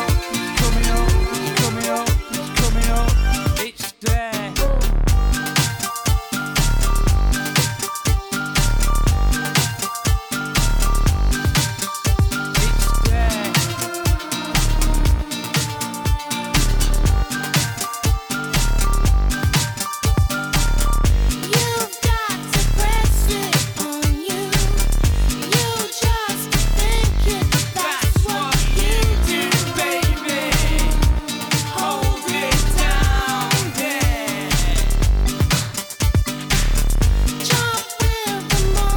Innstraße, Innsbruck, Österreich - fm vogel Abflug Birdlab Mapping Waltherpark Realities
Canesianum Blasmusikkapelle Mariahilf/St. Nikolaus, vogelweide, waltherpark, st. Nikolaus, mariahilf, innsbruck, stadtpotentiale 2017, bird lab, mapping waltherpark realities, kulturverein vogelweide, fm vogel extrem, abflug birdlab
Innsbruck, Austria, 21 May 2017